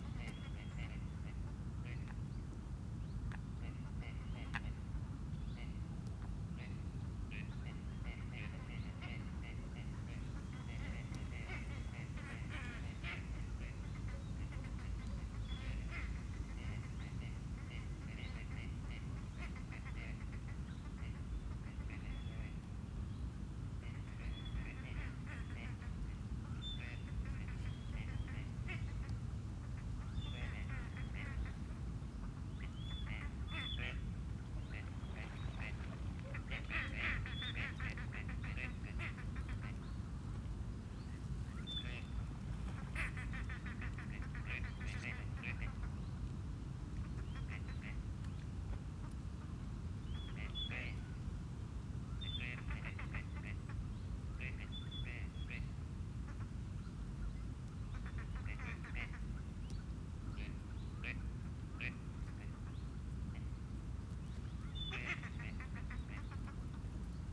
Most of the sound are by a family of Gadwall ducks, including the high squeak. It is a moorhen pattering across the lake surface and later splashes are a great crested grebe diving underwater. A heron looks on silently. The heavy bass in this recording comes from an industrial area some distance away.
Deutschland, 15 September 2021